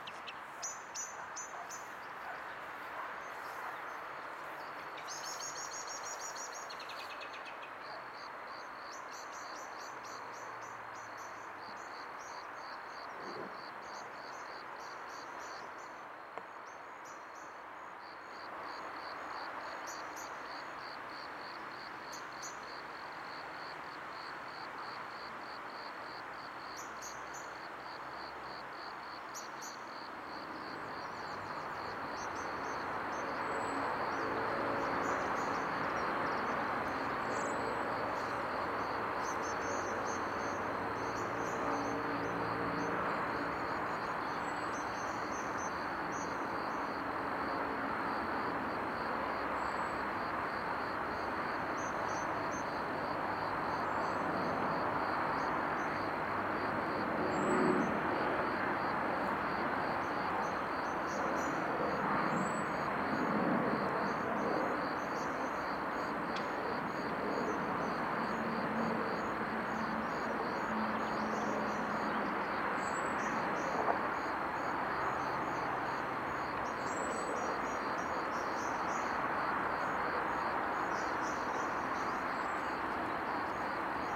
Variante à, Almada, Portugal - Garden ambience, Almada
Garden ambience @ Barreiro. Recorded with Zoom H6 XY stereo mic.